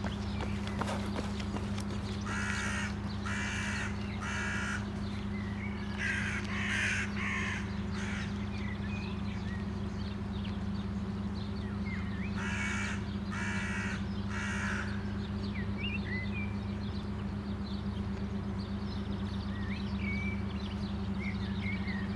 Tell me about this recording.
pleasantly oscillating low frequency idle of a sightseeing boat at the other side of the river. crows crying out over the trees. runners passing by.